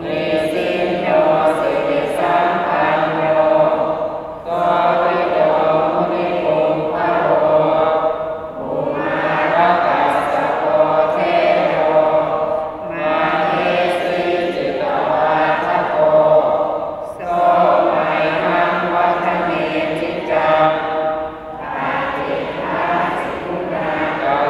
Bangkok, a ceremony in Wat Suthat
Bangkok, a ceremony in the Wat Suthat Temple.
Bangkok, Thailand, 8 April, ~11:00